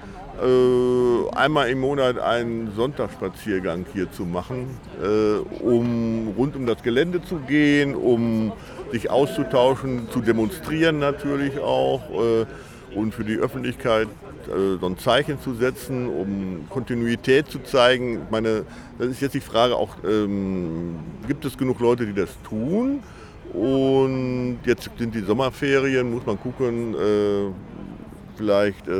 Kranstraße, Hamm, Germany - Mahnwache bei Westfleisch Hamm-Uentrop
Während der Interviewaufnahmen müssen die Sprecher*innen immer wieder pausieren wenn gerade wieder ein Laster mit 200 Schweinen in das Werksgelände einbiegt. Eindringliche Vergegenwärtigung des Ausmasses des Tierschlachtens, dass so der Plan, noch um mehr als das Dreifache anwachsen soll.